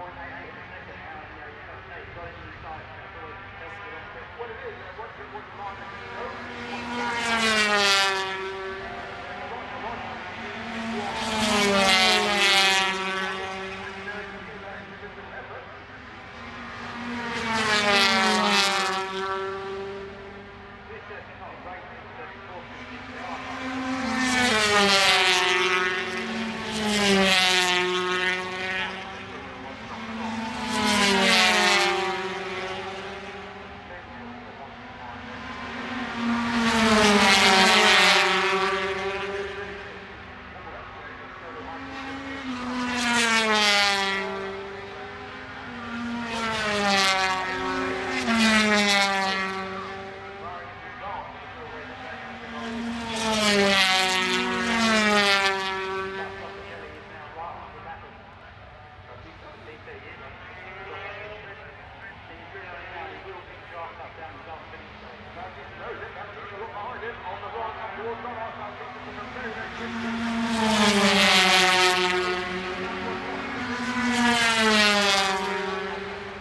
Unnamed Road, Derby, UK - British Motorcycle Grand Prix 2004 ... 125 race(contd) ...
British Motorcycle Grand Prix ... 125 race (contd) ... one point stereo mic to minidisk ...